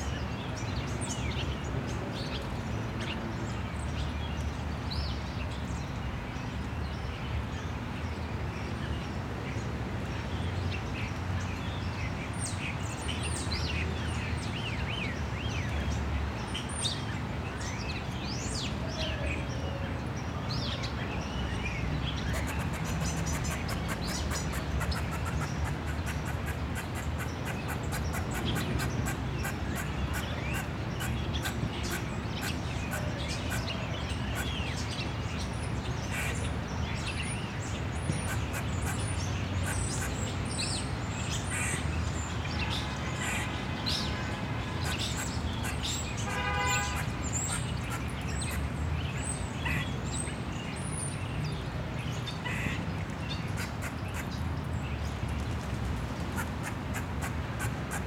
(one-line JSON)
{"title": "Grandview Ave, Ridgewood, NY, USA - Birds and a Grey squirrel alarm call", "date": "2022-03-17 14:45:00", "description": "Sounds of various birds and the alarm call of a grey squirrel (after 6:14).\nThe Grey squirrel was possibly reacting to the presence of a nearby dog.", "latitude": "40.71", "longitude": "-73.91", "altitude": "32", "timezone": "America/New_York"}